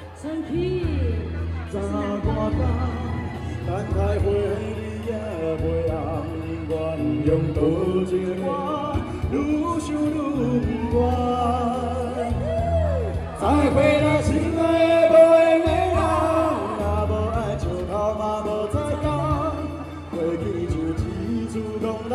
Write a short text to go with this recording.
Traffic Sound, Mid-Autumn Festival barbecue event, Zoom H2n MS +XY